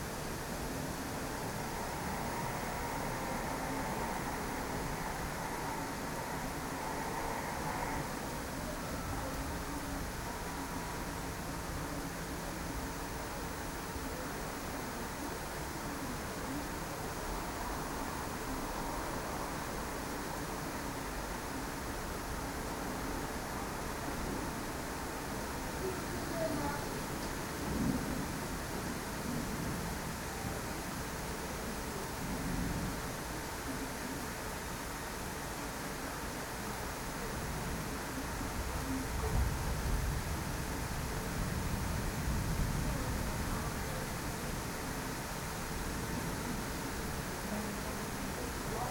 after a couple of hot days, it started to rain
The Hague, The Netherlands, 10 July 2010